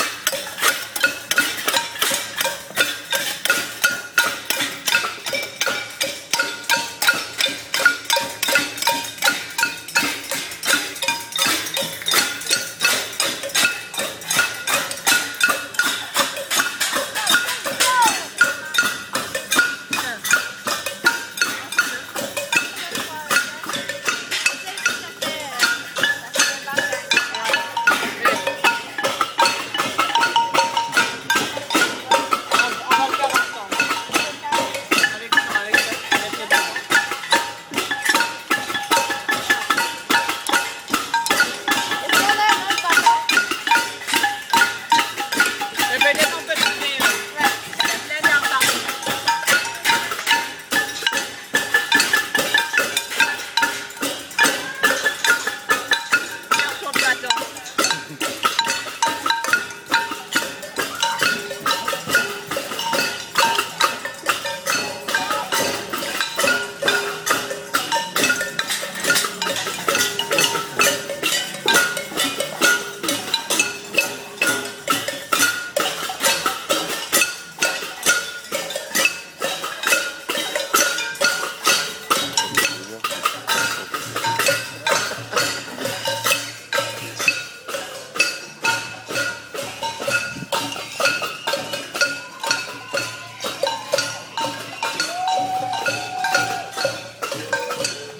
#manifencours 20:00 - 20:15 bruit contre la loi78